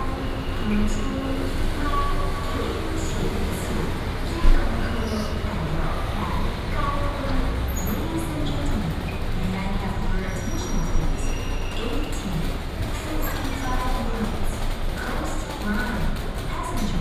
Chiayi Station, Chiayi City, Taiwan - Outside the station hall
Outside the station hall